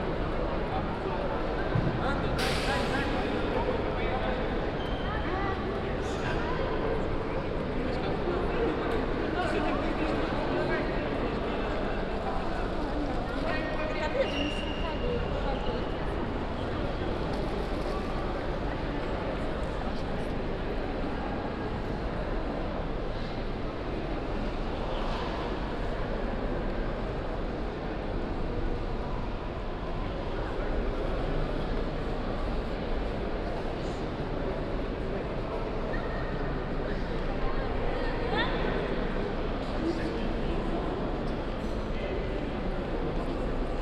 Garer Quartier, Lëtzebuerg, Luxemburg - Luxemburg, main station, hall
Inside the hall of the main station of Luxemburg. The sound of voices, rolling suitcases and the deep sound waves of trains arriving at the nearby platforms resonating in the high ceiling space.
international city soundmap - topographic field recordings and social ambiences